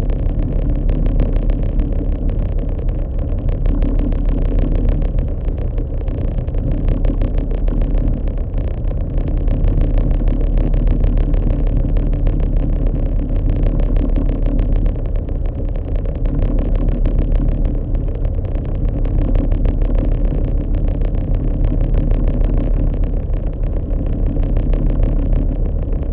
{"title": "Cronulla, NSW, Australia - Ferry From Bundeena To Cronulla, Contact Microphones On The Wood", "date": "2014-09-24 19:10:00", "description": "Recorded with two JrF contact microphones (c-series) to a Tascam DR-680.", "latitude": "-34.07", "longitude": "151.14", "timezone": "Australia/Sydney"}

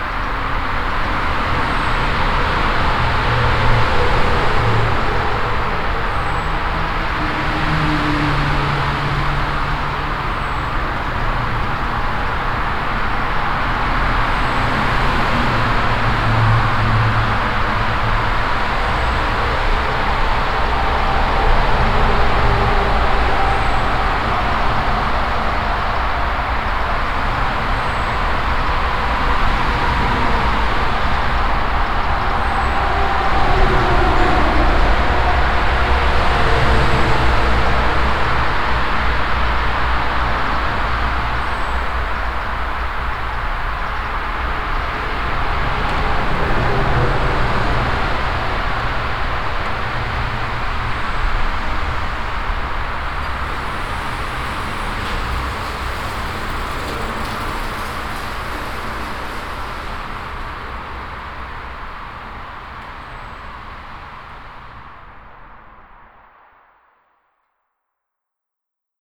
{"title": "Brück, Köln, Deutschland - Refrath, footway tunnel under highway A4", "date": "2013-07-18 14:30:00", "description": "In a narrow but long footway tunnel underneath the highway A4. The sound of the constant traffic passing by and resonating inside the tube. In the distance the high constant chirp of a eager bird in the forest at the end of the tunnel. At the end the sound of a bicycle entering the tunnel and passing by.\nsoundmap nrw - social ambiences and topographic field recordings", "latitude": "50.95", "longitude": "7.11", "altitude": "80", "timezone": "Europe/Berlin"}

